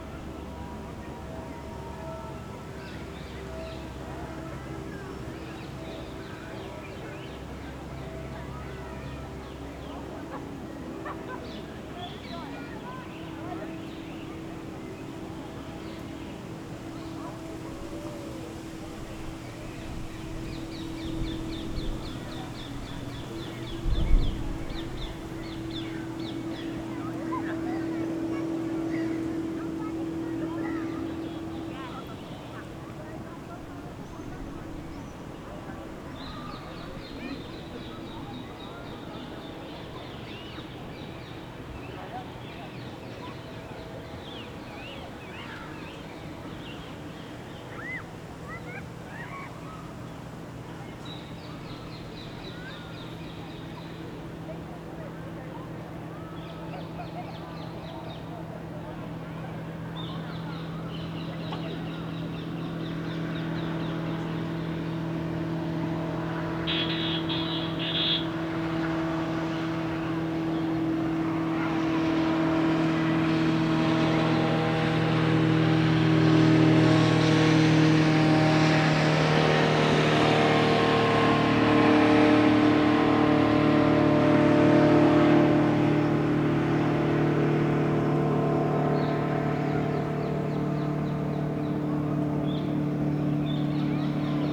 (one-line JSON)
{"title": "Stephanienufer, Mannheim, Deutschland - Sonnige Abendstimmung am Rhein", "date": "2022-05-26 18:52:00", "description": "Wasser (Rhein), Wind, Sonne, gelöste Stimmung der Menschen, urbane Hintergründe (Zug, Auto, Glocken), Motorboot, Vögel.", "latitude": "49.47", "longitude": "8.46", "altitude": "89", "timezone": "Europe/Berlin"}